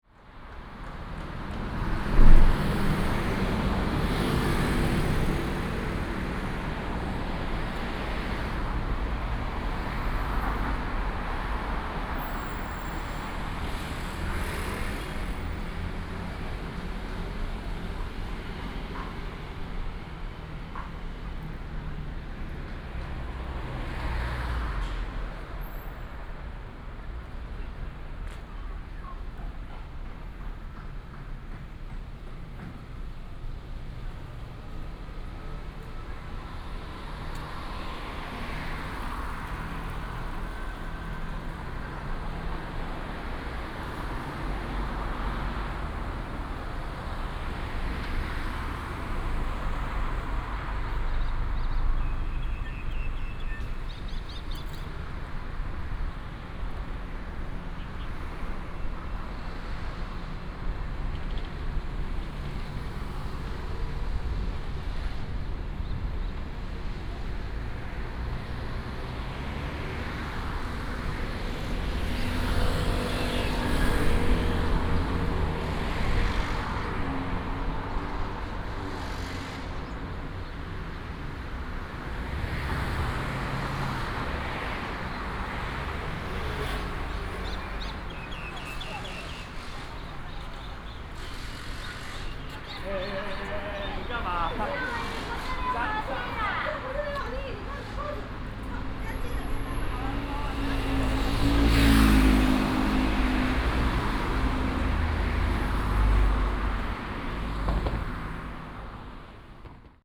Sec., Wenxing Rd., Zhubei City - Walking on the road
Walking on the road, Traffic sound, sound of the birds
7 May 2017, 15:34